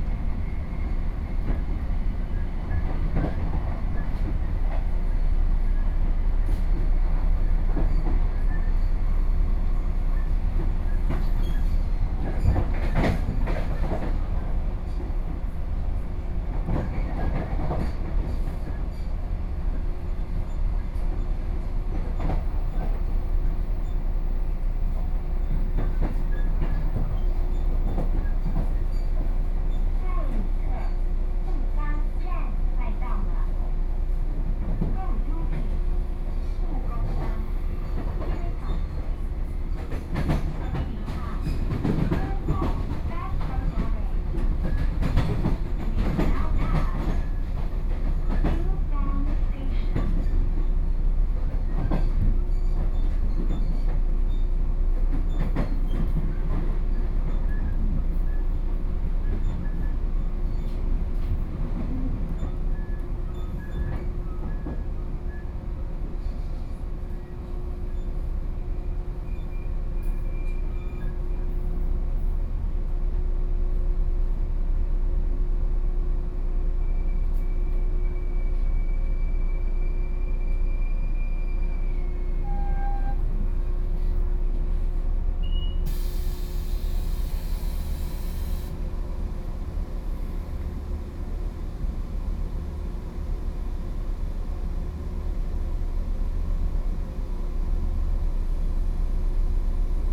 Yangmei City, Taoyuan - In a local train
In a local train, on the train, Binaural recordings
桃園縣 (Taoyuan County), 中華民國, 18 May 2013, 06:07